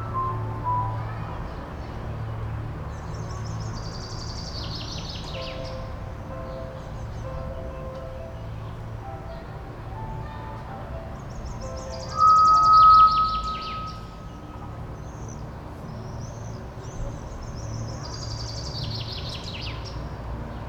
park window - musicians in pavilion, birds, aeroplane, car traffic ...
warm june morning ambience in the park, musicians tuning for 11 o'clock performance, song from childhood movie ”sreča na vrvici / meets on a leash"